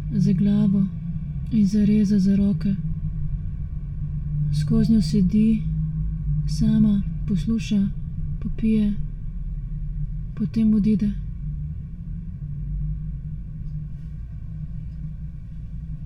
judge tower, Maribor - poem
reading performance with found objects - glass bowls - voice